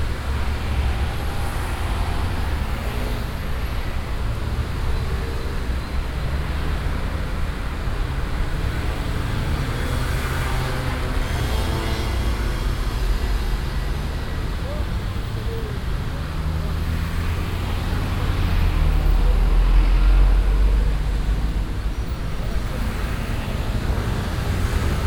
paris, quai de la megisserie, traffic
dense traffic on midday around a place for a monument
cityscapes international - sicaila ambiences and topographic field recordings